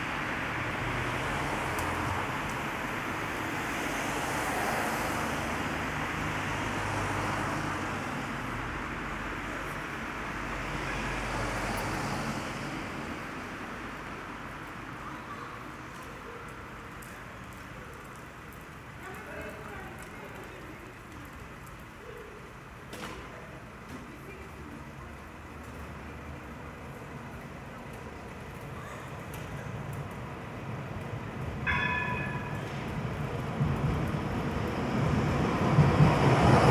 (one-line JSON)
{"title": "Rue Gallait, Schaerbeek, Belgique - Street ambience", "date": "2022-02-23 13:30:00", "description": "Cars, trams and distant conversations.\nTech Note : Ambeo Smart Headset binaural → iPhone, listen with headphones.", "latitude": "50.87", "longitude": "4.37", "altitude": "28", "timezone": "Europe/Brussels"}